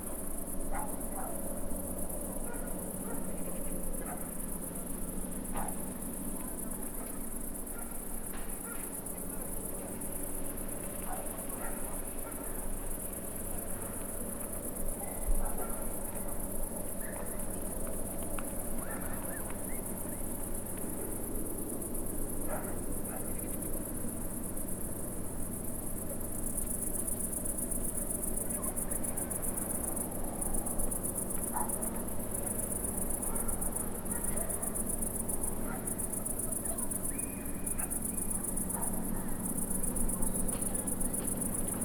Szczęsne, Pole-tory - Village turned to suburb
Crickets, dog barking, some ordinary suburb noises from distance.